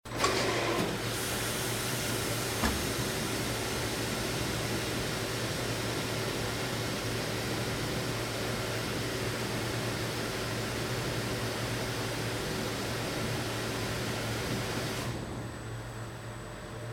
In the process of brewing the temperature of water is essential.
Here the sound of a pump transporting hot water into the mash tun.
Heinerscheid, Cornelyshaff, Brauerei, heiße Wasserpumpe
Während des Brauvorgangs ist die Wassertemperatur entscheidend. Hier das Geräusch von einer Pumpe, die das heiße Wasser in den Maischebottich transportiert.
Heinerscheid, Cornelyshaff, pompe à eau chaude
La température de l’eau est un élément essentiel dans le processus de brassage. On entend le bruit d’une pompe qui transporte de l’eau chaude dans la cuve-matièr
Heinerscheid, Luxembourg, 12 September, 17:39